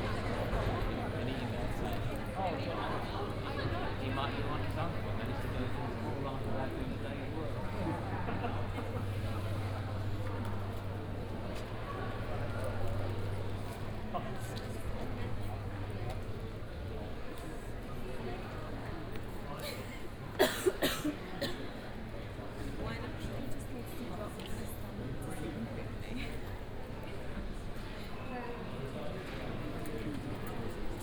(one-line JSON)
{"title": "Oxford Brookes University - Headington Campus, Gipsy Lane, Oxford - forum ambience", "date": "2014-03-11 16:40:00", "description": "walking in the forum cafe at Oxford Bookes University campus.\n(Sony PCM D50, OKM2)", "latitude": "51.75", "longitude": "-1.23", "altitude": "102", "timezone": "Europe/London"}